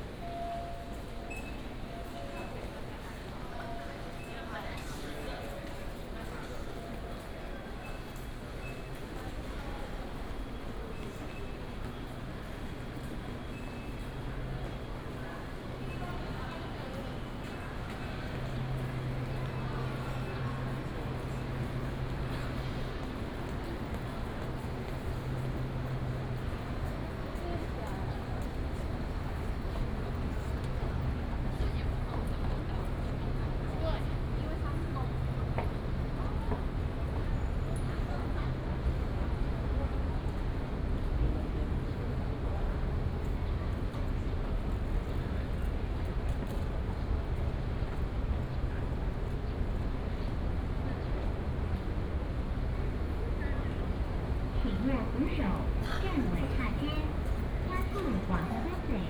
臺中火車站, 台中市北區 - walking in the Station
From the station platform, Through the hall, To the direction of the station exit, From the new station to the old station
22 March 2017, 08:10